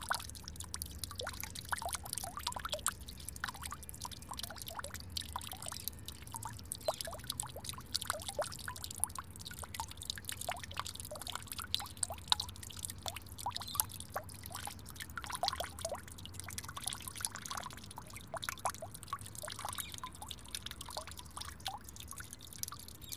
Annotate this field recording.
Slow trickle of the fountain on a cold morning, watching two men doing Tai Chi exercises in the sun. Zoom H4n